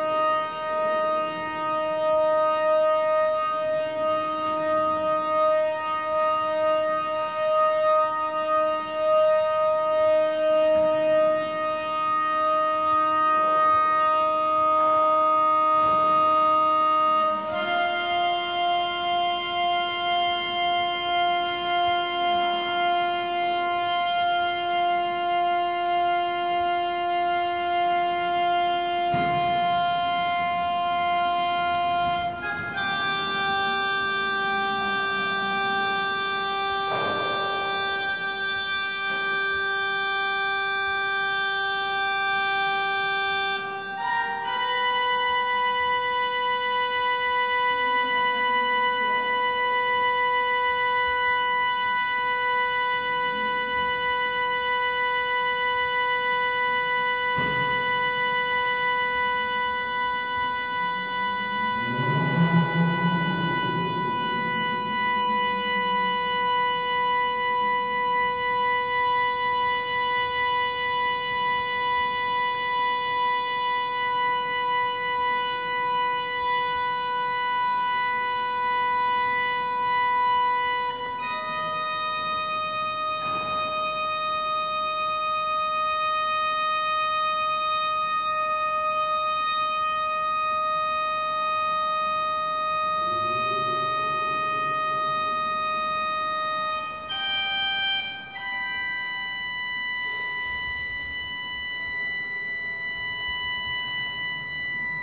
Lübeck, Altstadt, St. Jakobi Kirche zu Lübeck - pipe organ tuning
pipe organ being tuned at the church of saint Jacob. wonderful experience of subtle changing frequencies, reverberated and thus interfering with each other. outside a speeding motorcycle, also reverberated inside the church's body. quite stunning acoustics at this church. visitors talking and walking around the place.
motorcycle
Lübeck, Germany, 3 October